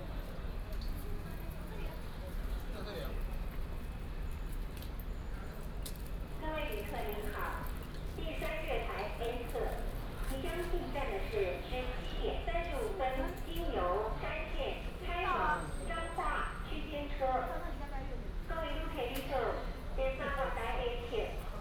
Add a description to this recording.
Walk into the Station platform, Station Message Broadcast